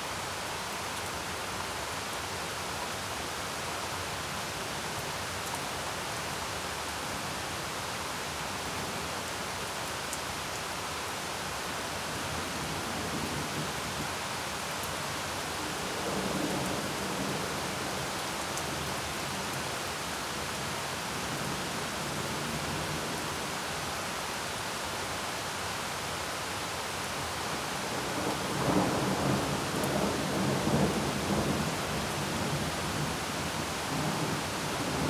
Mariahoeve, Den Haag, Nederland - onweer in de ochtend